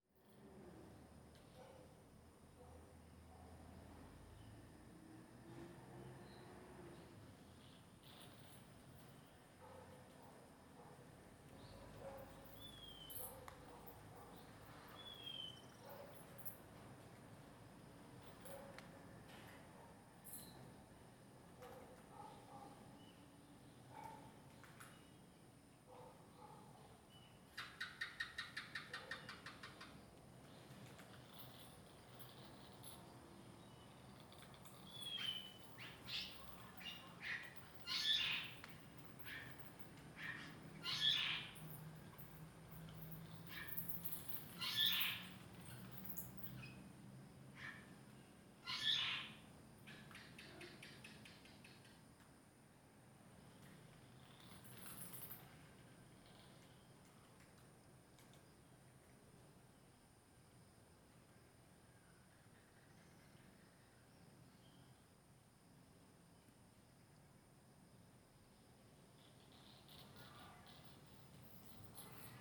參贊堂, Puli, Taiwan - Bats sound
Bats sound。
Zoon H2n (XY+MZ), CHEN, SHENG-WEN, 陳聖文